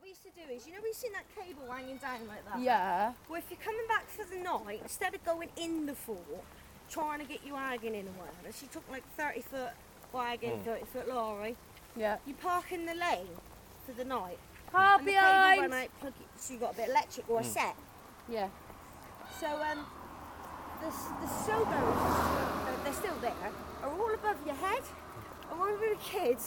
Efford Walk One: On Military Road talking about collecting food as kids - On Military Road talking about collecting food as kids